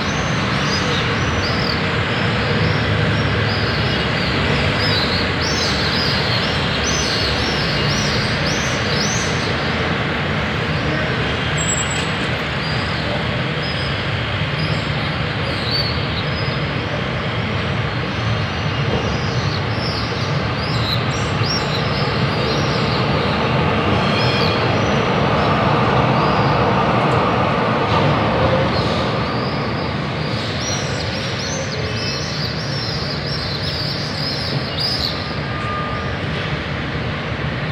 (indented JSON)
{
  "title": "Ville Nouvelle, Tunis, Tunesien - tunis, hotel backyard, eurasian swifts and traffic in the morning",
  "date": "2012-05-02 07:15:00",
  "description": "Recorded early in the morning out of the 2nd floor window into the hotel backyard. The sounds of hundreds of eurasian swifts flying low over the builings while chirping and the traffic and tram sound in the background.\ninternational city scapes - social ambiences and topographic field recordings",
  "latitude": "36.80",
  "longitude": "10.18",
  "altitude": "14",
  "timezone": "Africa/Tunis"
}